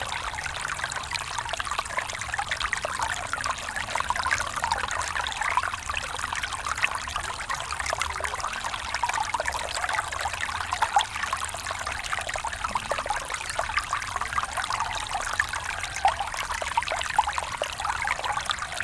Bonya Residence Ghana - Little Stream in Bonya residence Ghana.
Little Stream in Bonya residence Ghana.
Date: 09.04.2022. Time: 8am. Temperature: 32°C.
subtle human and bird activity.
Format: AB.
Recording Gear: Zoom F4, RODE M5 MP.
Field and Monitoring Gear: Beyerdynamic DT 770 PRO and DT 1990 PRO.
Best listening with headphones for spatial immersion.